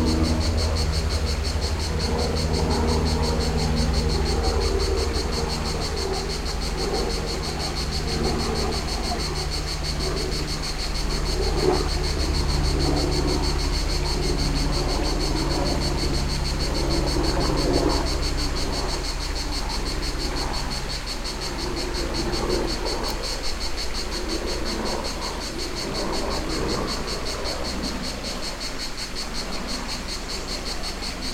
at the creek: cicadas, aeroplane removed, cars removed, now and then some birds.